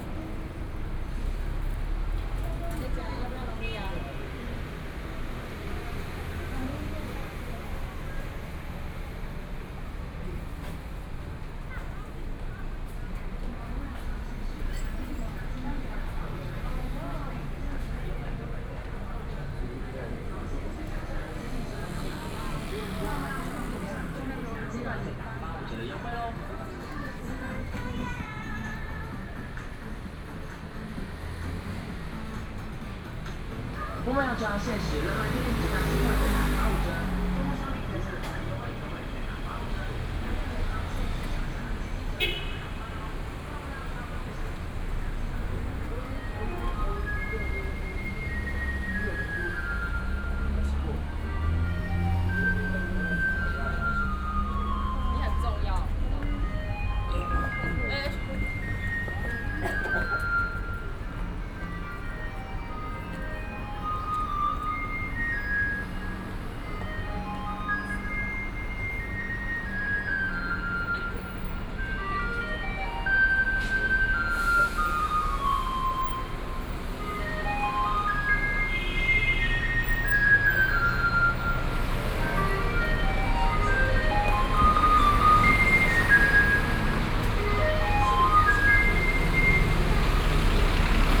Linsen N. Rd., Taipei City - walking on the road
walking on the road, Through a variety of different shops, Walking towards the south direction
Please turn up the volume a little
Binaural recordings, Sony PCM D100 + Soundman OKM II